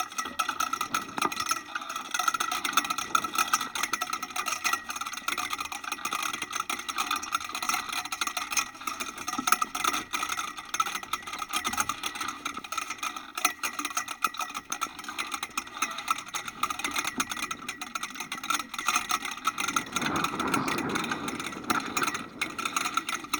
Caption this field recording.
flagstaff, contact mic recording, the city, the country & me: november 25, 2012